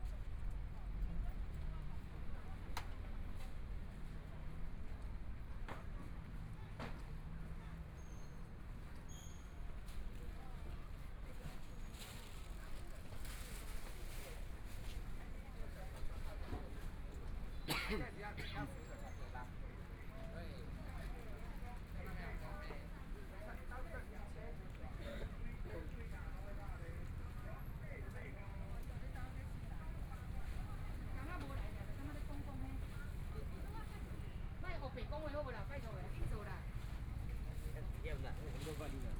中山區, Taipei City - Soundwalk
Walking in the small streets, Through different streets, Binaural recordings, Zoom H4n+ Soundman OKM II
Zhongshan District, Taipei City, Taiwan, 20 January, 17:16